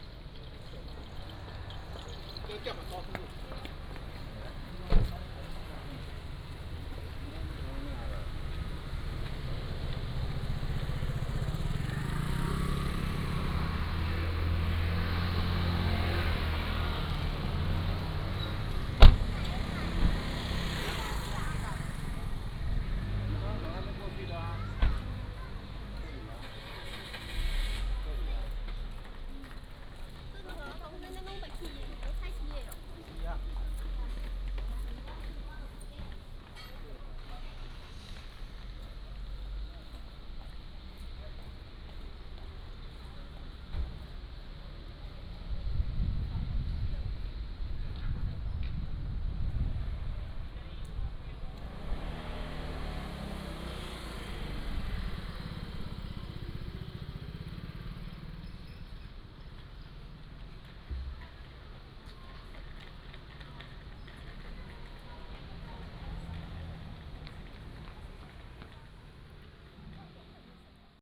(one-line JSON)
{"title": "Mofan St., Jincheng Township - Walking in the traditional alleys", "date": "2014-11-03 18:32:00", "description": "Walking in the traditional alleys, Traffic Sound", "latitude": "24.43", "longitude": "118.32", "altitude": "14", "timezone": "Asia/Taipei"}